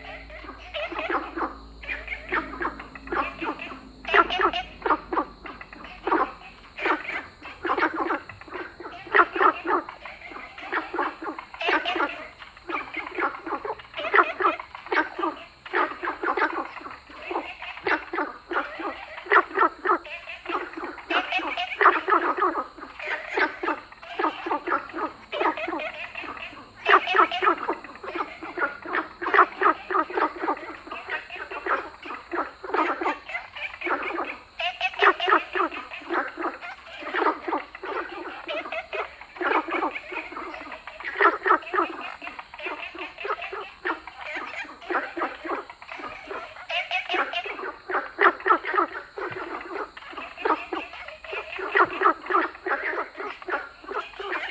富陽自然生態公園, Taipei City, Taiwan - Frogs sound
In the park, Frog sound, Ecological pool
Zoom H2n MS+XY